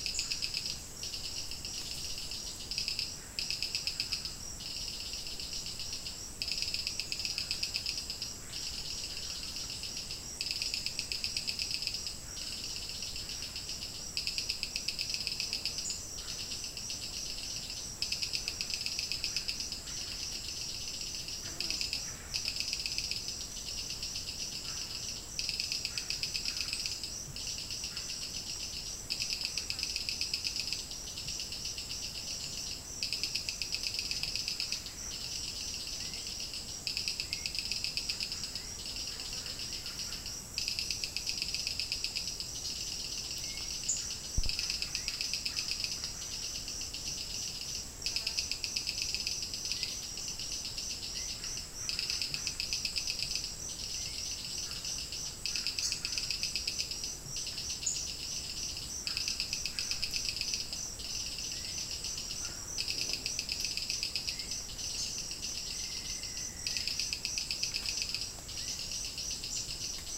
La Chorrera, Amazonas, Colombia - AMBIENTE SELVA
AMBIENTE SELVA CERCA A LA CHORRERA, GRABADORA TASCAM DA-P1 Y MICROFONO PV-88 SHURE. GRABACION REALIZA POR JOSÉ LUIS MANTILLA GÓMEZ.